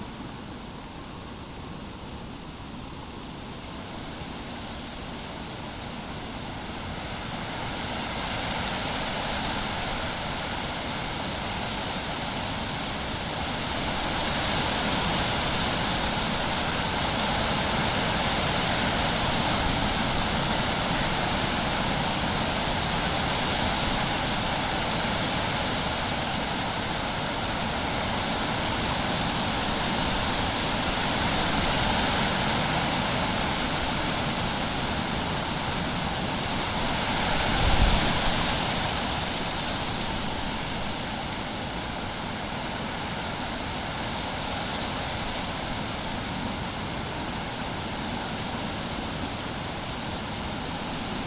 Mons, Belgium - Wind in the trees
A strong wind is blowing in the poplar trees. Weather is not very good, would it be a good time to hear a simple wind in the trees ?